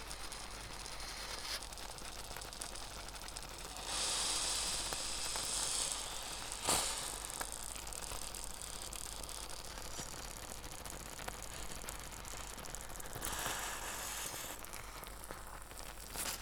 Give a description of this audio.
warming up a serving of pumpkin chili. very talkative dish.